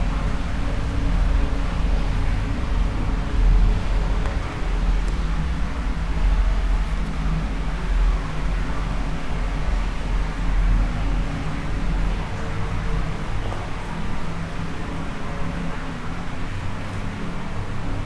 Inside the mine, we climbed into an hard to reach tunnel and we found this strange old tank, where water streams with curious motor sounds.